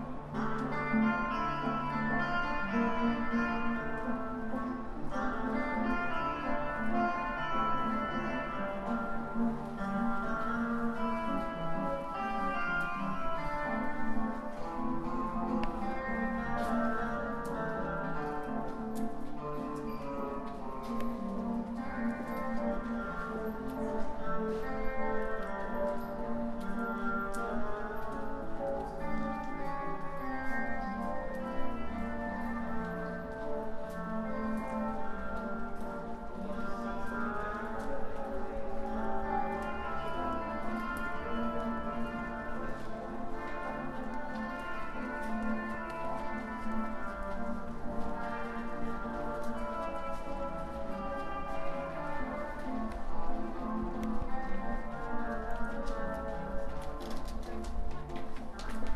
Street musician in the tunnel near Baltijaam
Street musician playing his guitar in the tunnel (under the road) near BaltiJaam. (jaak sova)